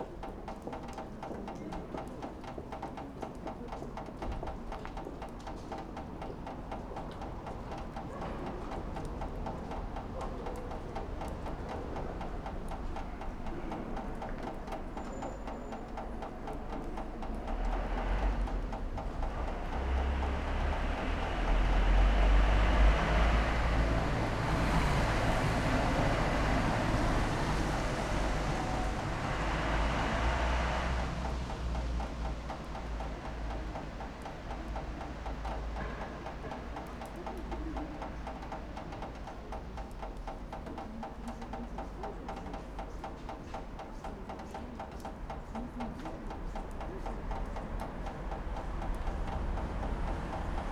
after a rain. water drips from rainwater pipe
6 November 2012, ~14:00